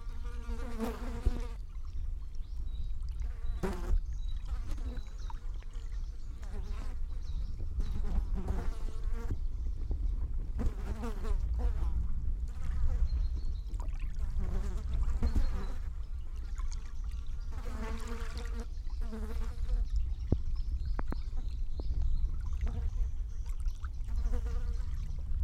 Nida, Lithuania, at the dead fish
dead fish on a coast. two contact mics under the corpse and two omnis above it...the feast of the flies